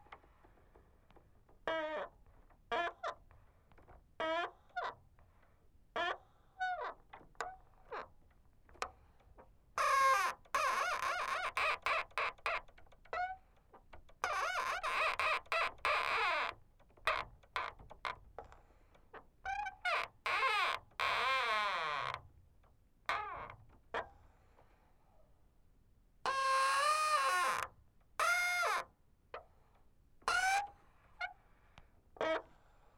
Krügerstraße, Mannheim, Deutschland - Favourite door C 1
Third of my three favourite doors. Creaky hinges of a large metal door recorded with two AKG C 411 contact microphones placed on different parts of the door near the hinges and a Sound Devices 702 Field recorder